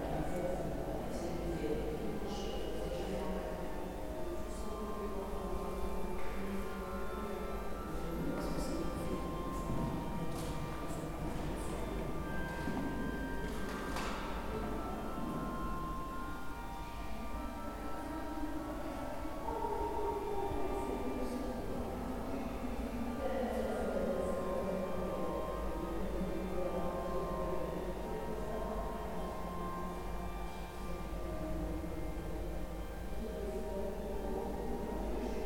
Avenue Gabriel Péri, Saint-Ouen, France - Église Notre-Dame du Rosaire
An early morning meditation in the Église Notre-Dame du Rosaire, St Denis. I couldn't help but get distracted by the shifting intelligibility of voices moving in this vast, reverberant space (spaced pair of Sennheiser 8020s with SD MixPre6).